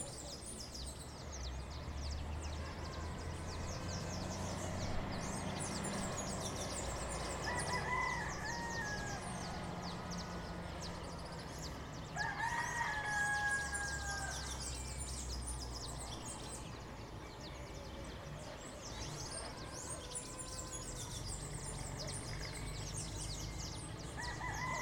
AVes y Gallos cerca del lugar de hospedaje.
Casanare, Colombia, June 2013